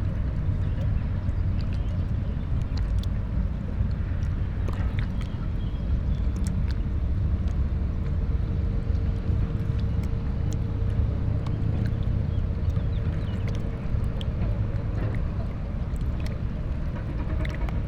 river Drava, Ptuj - river flow
saturday evening soundscape close to the river Drava, cumulonimbus cloud reflected with descending sun, works on not so far away bridge, swifts and river gulls ...
Ptuj, Slovenia, 28 June, 20:46